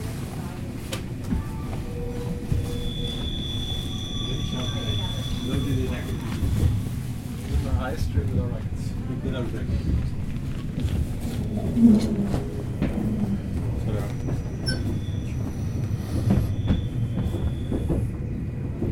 Berninabahn, Ansage der Aussicht ins Puschlav
Berninabahn, Rhätische Bahn von Berninapass bis Tirano Italien, Durchsage der Aussicht, Weltkulturerbe